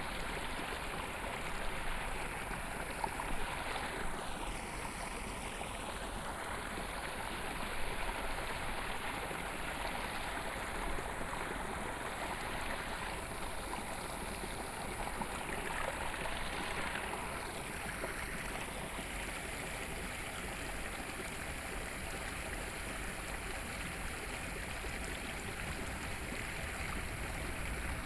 源城里, Yuli Township - In the farmland
In the farmland, Traffic Sound, Farmland irrigation waterways, The sound of water, Train traveling through